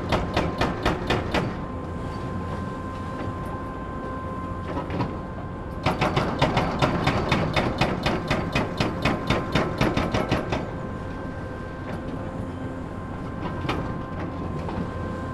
excavator with mounted jackhammer demolishes building elements, echo of the jackhammer, distant drone of a fog cannon, noise of different excavators
the motorway will pass through this point
the federal motorway 100 connects now the districts berlin mitte, charlottenburg-wilmersdorf, tempelhof-schöneberg and neukölln. the new section 16 shall link interchange neukölln with treptow and later with friedrichshain (section 17). the widening began in 2013 (originally planned for 2011) and will be finished in 2017.
february 2014
Berlin, Germany, 18 February 2014, 14:17